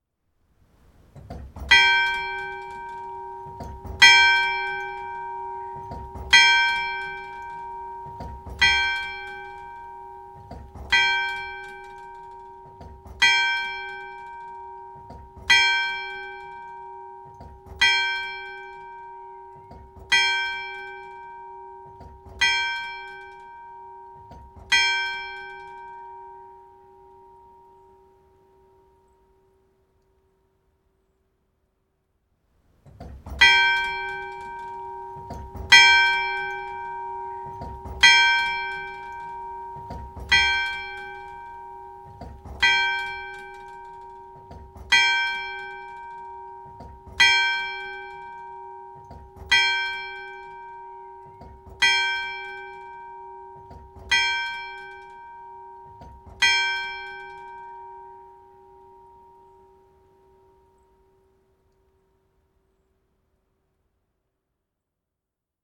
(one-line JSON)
{"title": "Pl. du Château, Frazé, France - Frazé - Église Notre Dame", "date": "2019-10-15 11:00:00", "description": "Frazé (Eure et Loir)\nÉglise Notre Dame\nUne seule cloche - 11 heures", "latitude": "48.26", "longitude": "1.10", "altitude": "191", "timezone": "Europe/Paris"}